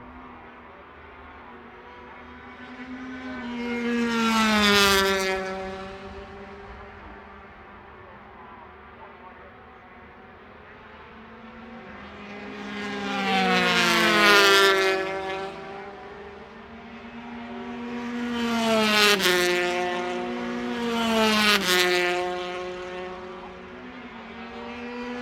Unnamed Road, Derby, UK - British Motorcycle Grand Prix 2004 ... 125 Qualifying ...
British Motorcycle Grand Prix 2004 ... 125 Qualifying ... one point stereo mic to minidisk ... date correct ... time optional ...
23 July 2004